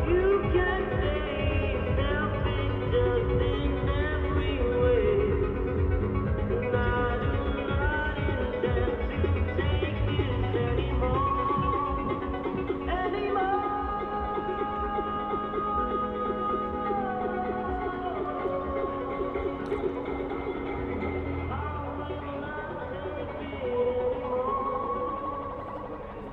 Nalepastr, area of the former national GDR broadcast, river Spree, sonic impact of a rave going on all day half a kilometer away
Deutschland, European Union, 22 June